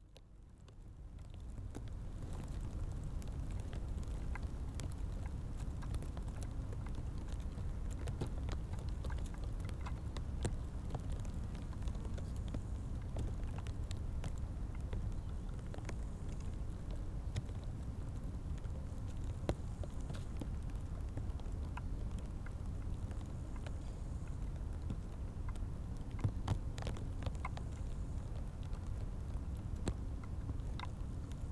Mariánské Radčice, Tschechische Republik - Meadow near Libkovice
Unknown crackling in the beginning(ants?), then a powered glider starts to spin around.